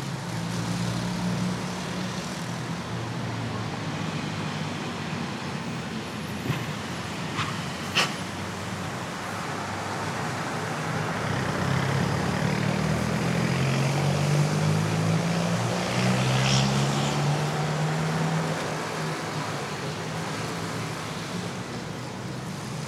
Dg., Bogotá, Colombia - Paisaje Sonoro, Zona Residencial
The soundscape was recorded near Calle 80 in the city of Bogota at 6:00 pm. A place where the traffic is constant.
At this time of day, it was raining very lightly, so the street when the cars pass, it sounds damp. And since it is a residential area, you can see dogs barking, and people in the distance talking.